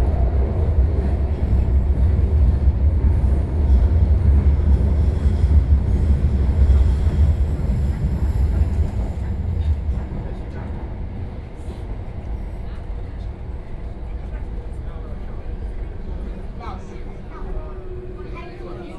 Huangpu District, Shanghai - Line 10 (Shanghai Metro)
from East Nanjing Road Station to Laoxime Station, Binaural recording, Zoom H6+ Soundman OKM II